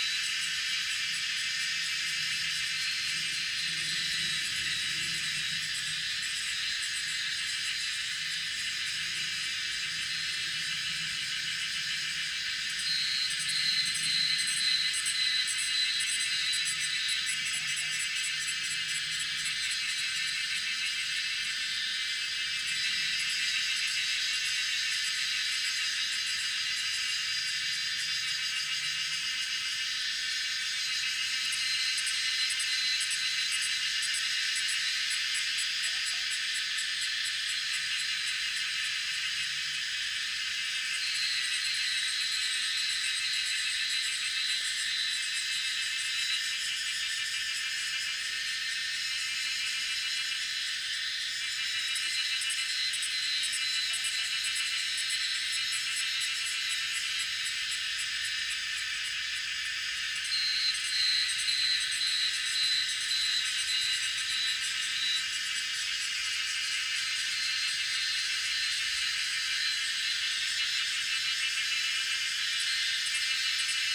三角崙, 埔里鎮, Taiwan - in the woods
Cicada sounds, in the woods
Zoom H2n MS+XY